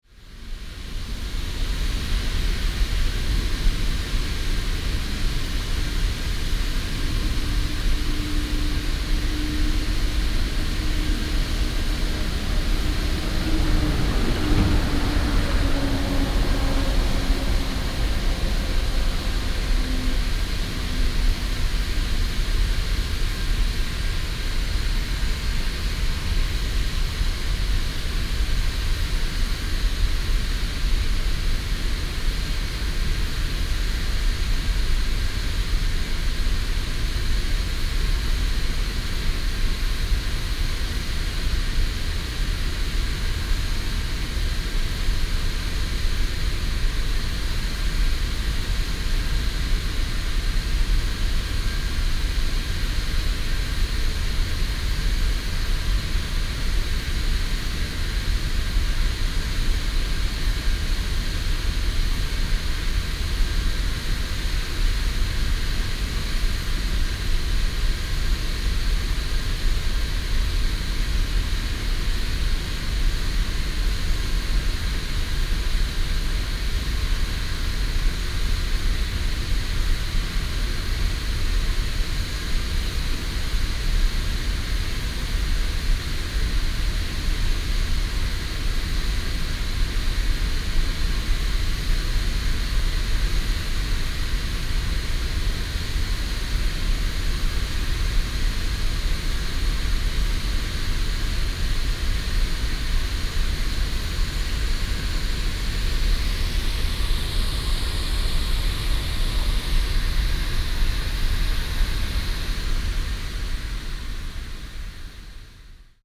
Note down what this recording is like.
Petrochemical Factory Sound, Traffic sound